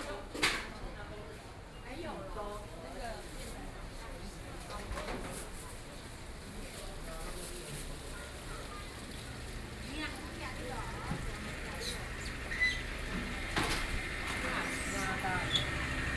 Datong Rd., Guanxi Township - soundwalk
Walking through the town's market, Traffic Sound, Binaural recording, Zoom H6+ Soundman OKM II